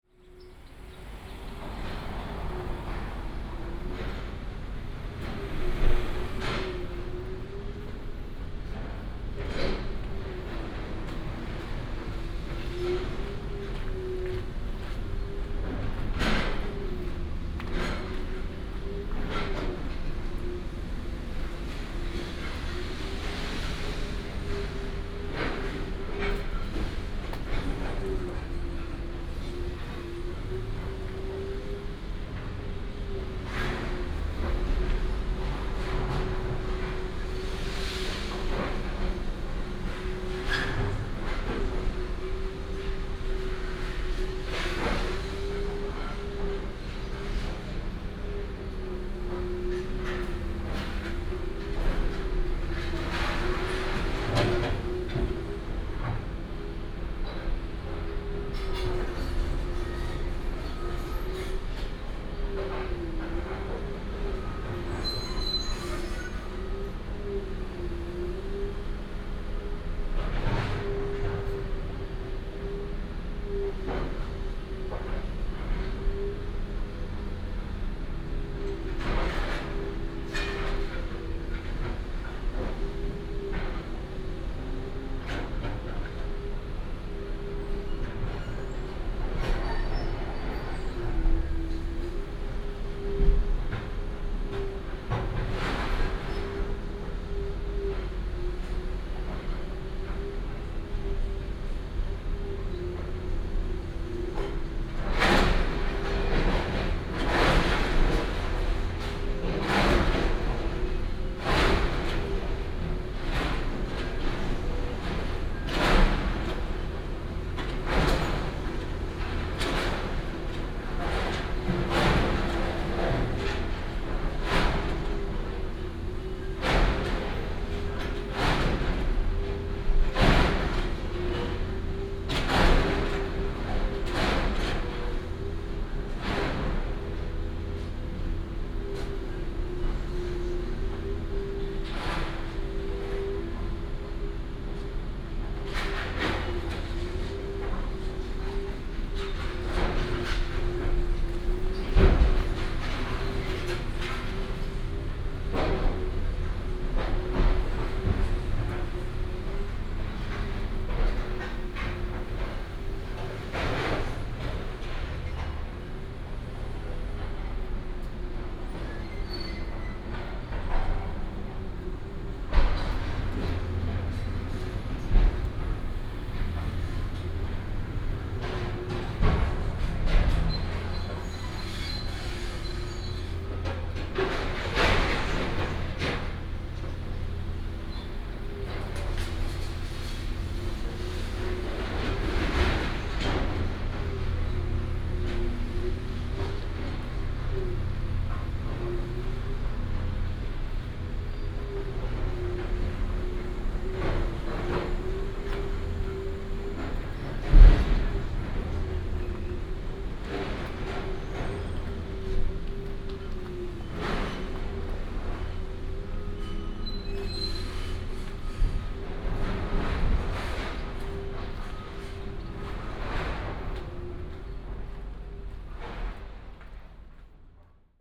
Bo’ai Rd., Yuanlin City - Walking in a small alley
sound of the Construction demolition, Traffic sound, Walking in a small alley